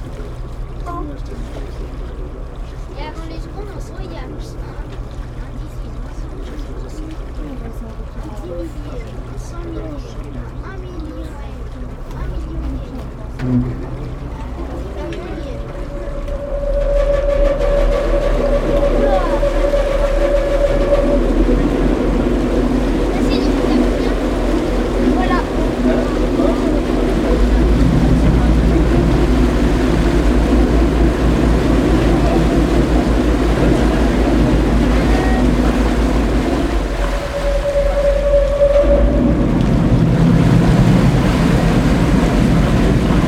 Venise, Italie - Vaporetto from Murano
On the Vaporetto between Murano and Venice, Zoom H6